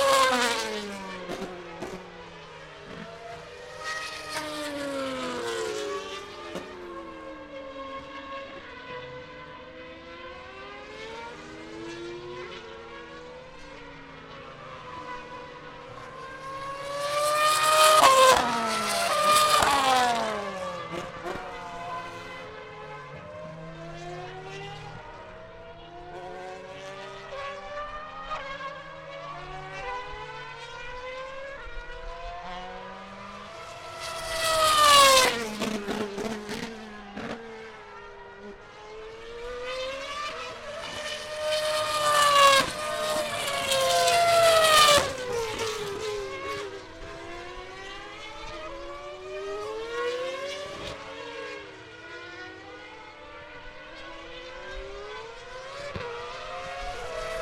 Silverstone, UK - F1 Cars at corner
F1 Cars at screeching past a corner at Silverstone.
Recorded using a Zoom H4N